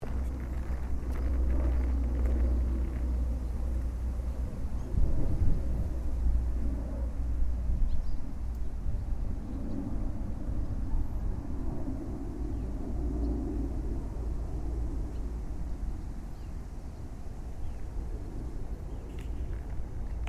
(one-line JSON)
{"title": "birds, flying jet", "date": "2010-06-16 13:37:00", "description": "愛知 豊田 bird jet", "latitude": "35.14", "longitude": "137.15", "altitude": "89", "timezone": "Asia/Tokyo"}